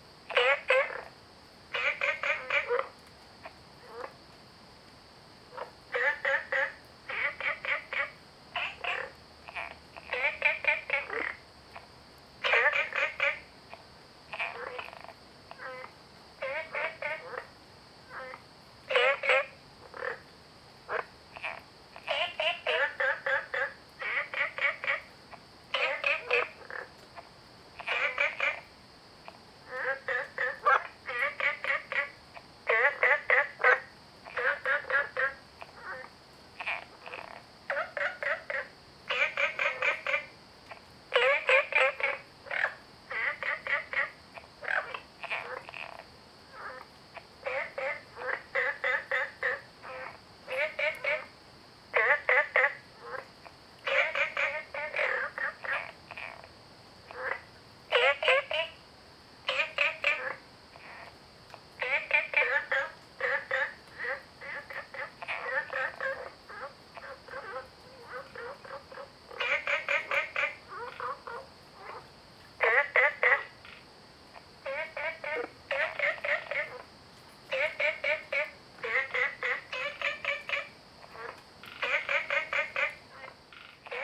woody house, 埔里鎮桃米里 - Frogs chirping
Frogs chirping, Ecological pool
Zoom H2n MS+XY
3 September 2015, 04:39